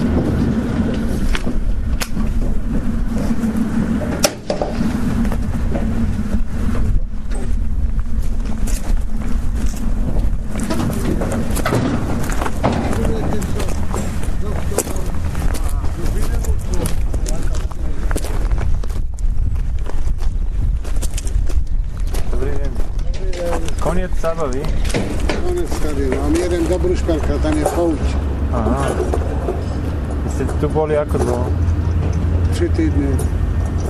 ostrava, lunapark III, day after